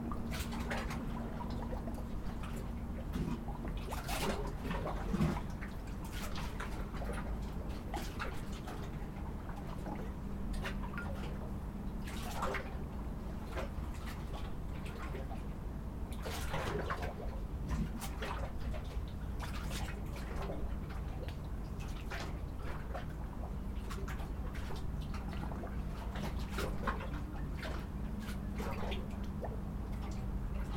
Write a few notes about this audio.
Water bubbling against the wooden pier, distance fog horn and jet plane, port sounds